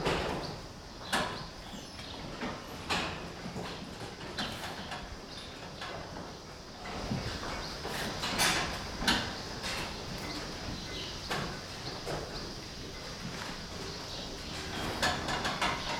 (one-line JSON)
{"title": "Halenfeld, Buchet, Deutschland - Kuhstall 2 / Cowshed 2", "date": "2014-07-06 13:05:00", "description": "Geräusche aus dem Kuhstall: Fressgitter klappern, Kühe muhen, Schwalben zwitschern.\nSounds coming from the cowshed: feed fence rattle, cows mooing, chirping swallows", "latitude": "50.26", "longitude": "6.32", "altitude": "504", "timezone": "Europe/Berlin"}